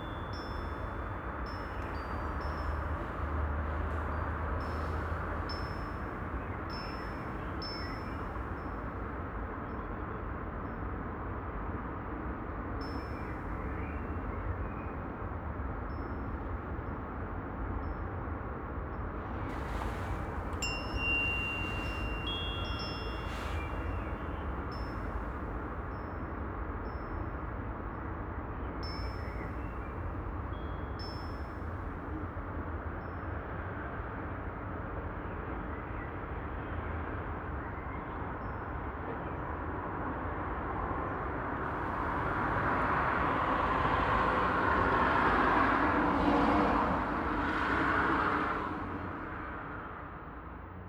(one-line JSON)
{"title": "Ostviertel, Essen, Deutschland - essen, eiserne hand, wind chime", "date": "2014-04-16 16:50:00", "description": "Am Eingang zu einem Privathaus. Vorbeifahrender Verkehr. Der Klang eines Windspiels im milde, böigem Frühlingswind.\nAt the entrance to a private house. Passing Traffic. The sound of a windchime in the mild, breezy spring wind.\nProjekt - Stadtklang//: Hörorte - topographic field recordings and social ambiences", "latitude": "51.46", "longitude": "7.03", "altitude": "76", "timezone": "Europe/Berlin"}